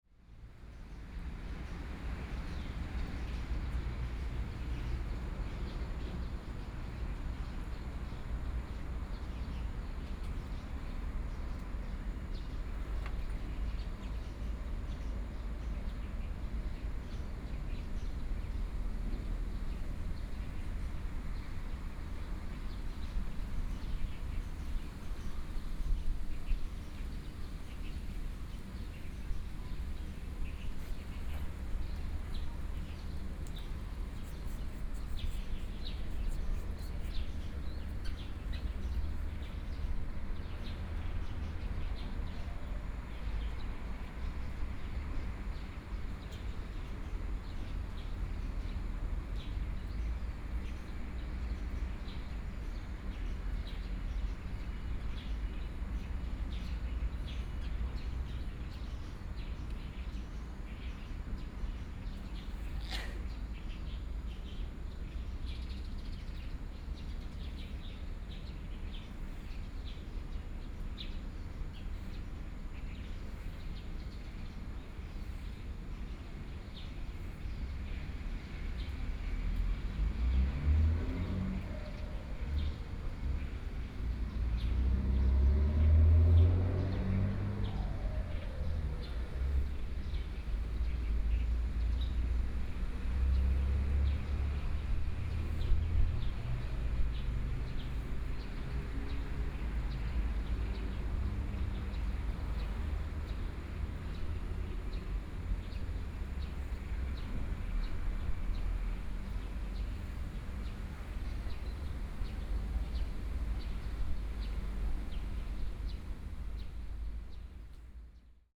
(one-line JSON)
{"title": "Yuanshan Park, Yuanshan Township - in the Park", "date": "2014-07-22 11:55:00", "description": "in the Park, Quiet park, Birds singing\nSony PCM D50+ Soundman OKM II", "latitude": "24.75", "longitude": "121.72", "altitude": "22", "timezone": "Asia/Taipei"}